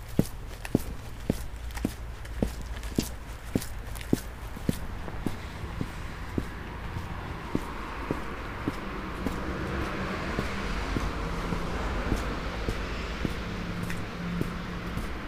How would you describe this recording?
Sound walk. Winter. Snow is already melted. Microphones hidden in clothing.